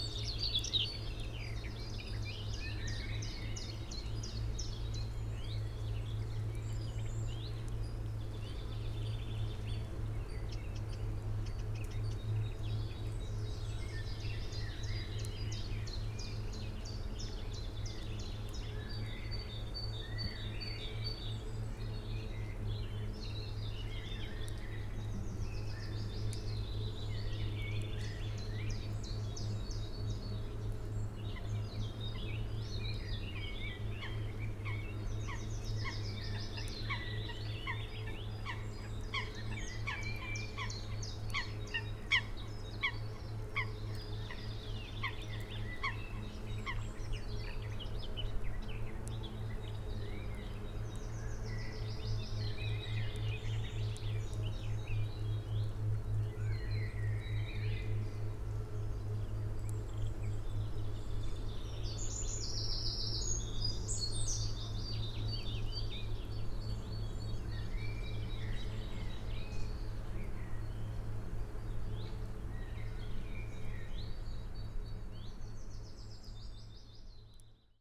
Gelderse Toren Spankeren, Netherlands - Gelderse Toren
mix of 2 Synchronized stereo recordings. 2x spaced omni + telinga parabolic mic.
Birds, Boat, Tractor.